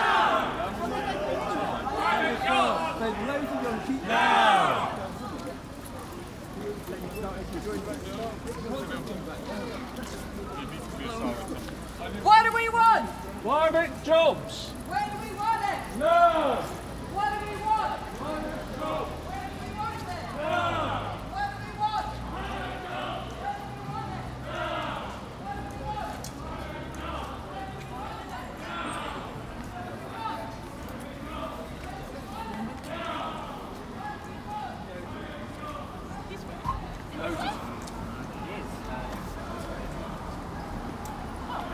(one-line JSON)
{"title": "Reading, Reading, Reading, UK - Reading People's March for Climate", "date": "2015-11-28 12:20:00", "description": "The chants and conversations of a march through Reading's town centre passes Starbucks on Kings Street. 'Reading People's March for Climate' has been organised to \"encourage leaders at the Paris summit COP21 to reach courageous and binding decisions on Climate Change\". Recorded on the built-in microphones on a Tascam DR-05.", "latitude": "51.46", "longitude": "-0.97", "altitude": "45", "timezone": "Europe/London"}